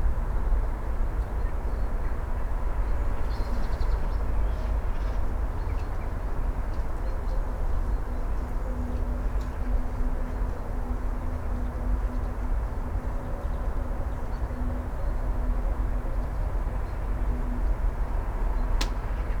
first 3 or so minutes - two male foxes chasing each other on a field, fighting over a female. at some point they got tired for a while and situation on the field got quiet. scared deer got back to nibbling dead leaves, wild hogs laid down. sound of the morning city, coffee making, usual traffic. (roland r-07)